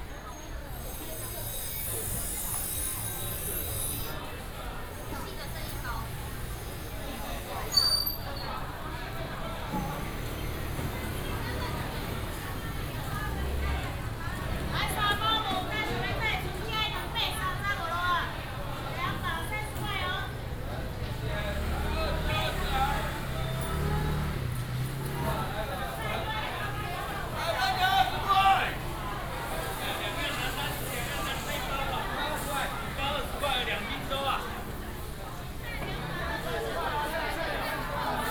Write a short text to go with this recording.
Vegetable and fruit wholesale market